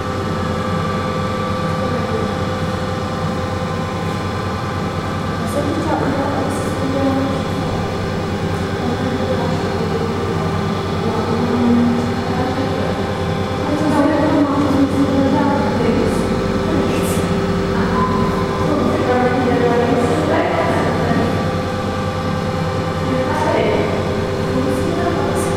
tondate.de: deutsches museum, halle - tondatei.de: deutsches museum magnetresonanzmikroskop